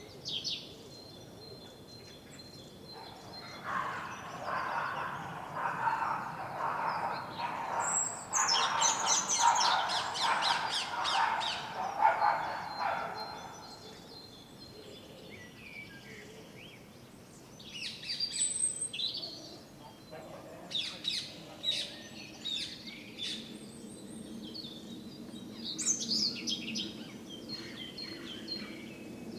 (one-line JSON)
{"title": "Rue Keyenbempt, Uccle, Belgique - finally peace 3", "date": "2020-03-08 10:20:00", "latitude": "50.79", "longitude": "4.32", "altitude": "32", "timezone": "Europe/Brussels"}